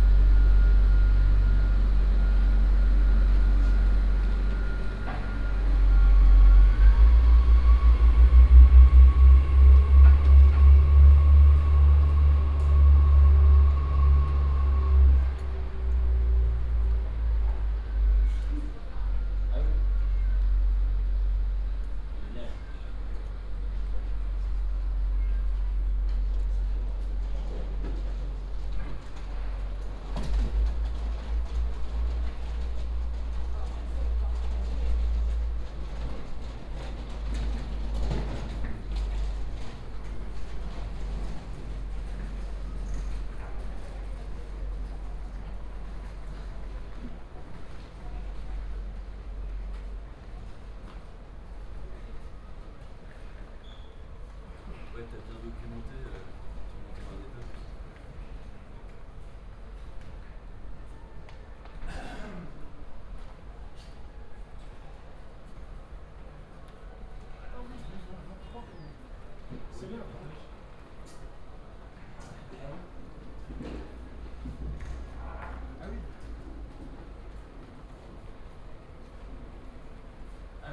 Gare dAngers Saint-Laud, Angers, France - (601) Railway platform announcement
Binaural recording of a railway platform announcement; SNCF train to Nantes.
recorded with Soundman OKM + Sony D100
sound posted by Katarzyna Trzeciak